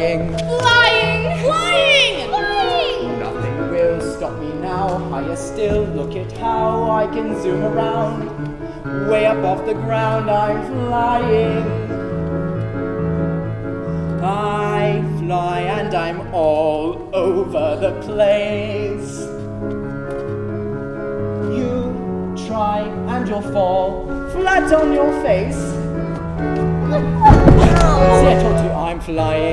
Ramsdell Theatre, Maple St., Manistee, MI, USA - Rehearsal, "Flying" (Peter Pan)
Thomas Bond appeared in the title role. Recorded two weeks before opening night, inside main theatre space (built in 1903). Stationed in the orchestra pit, near edge of stage. Stereo mic (Audio-Technica, AT-822), recorded via Sony MD (MZ-NF810).